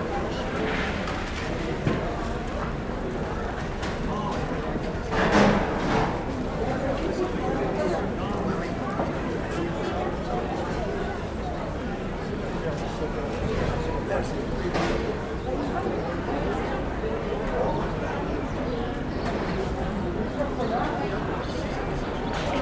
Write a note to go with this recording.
Toute l'animation d'un jour de marché ZoomH4Npro posé sur la selle du vélo attaché à son arceau, un peu à l'écart des étalages.